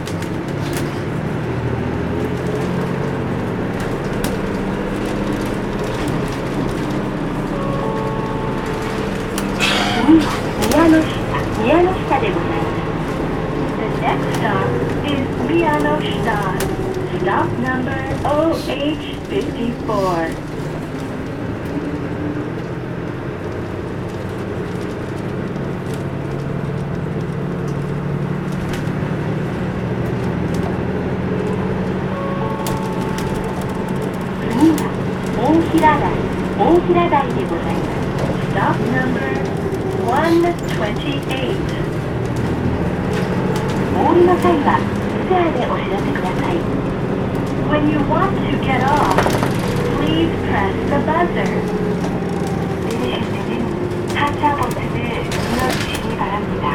Bus to to Hakone-Yumoto.
Recording with Olympus DM-550
関東地方, 日本